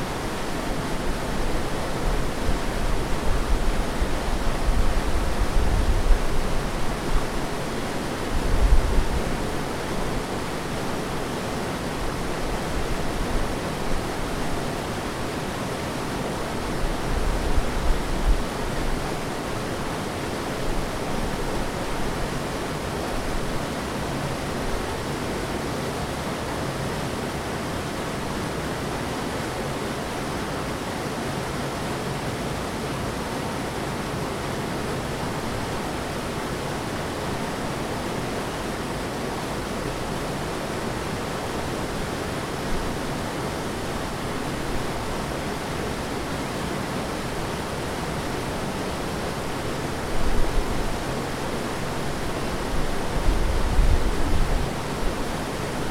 Sikorskiego, Gorzów Wielkopolski, Polska - Former Venice Cefe.
Old water dam near the former Venice cafe.
23 April 2020, ~16:00